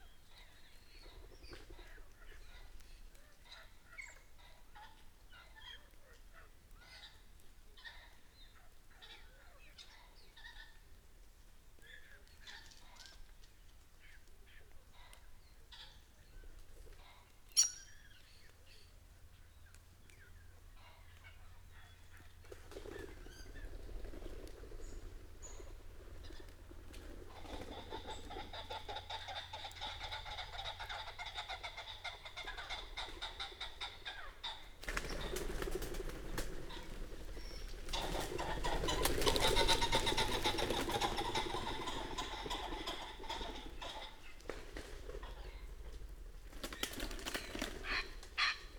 Green Ln, Malton, UK - pheasants leaving roost ...
pheasants leaving roost ... dpa 4060s in parabolic to MixPre3 ... bird calls from ... wren ... blackbird ... treecreeper ... crow ... redwing ... fieldfare ... robin ... red-legged partridge ...
29 November 2020, 07:38